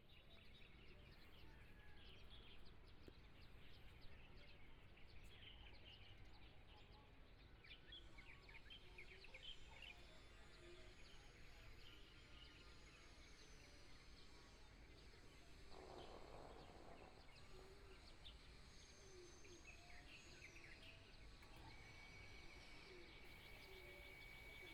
雲林縣水林鄉蕃薯村 - Environmental sounds
small Town, Broadcast Sound, Birds singing, Pumping motor sound, The sound of firecrackers, Binaural recordings, Zoom H4n+ Soundman OKM II
Shuilin Township, 雲151鄉道, 1 February 2014